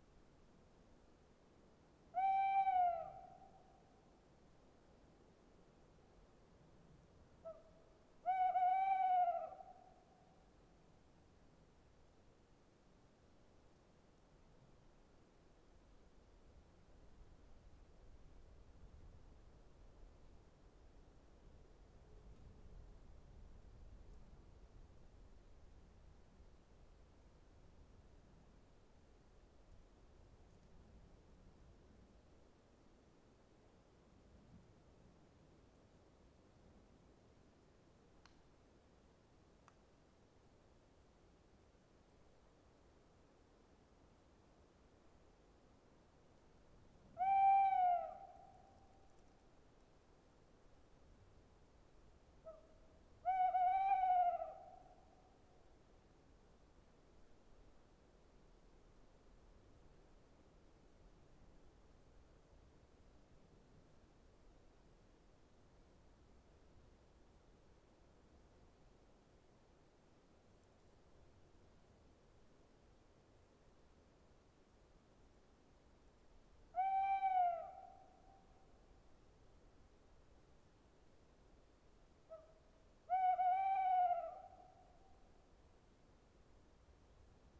Unnamed Road, Colombier, France - tawny owl singing
Lonely Tawny owl singing in the night. The weather is cloudy, temp 8-10 C°, Gentle breeze on the treetop and on the montain slope. About 50m from me. A place with a narow valley with less noise pollution (beside airplanes!)
Recording Gear : 2 AT4021 in ORTF, Sound Device Mix pre 6. + 3db, 80hz lowcut filter
20 April 2019, 22:30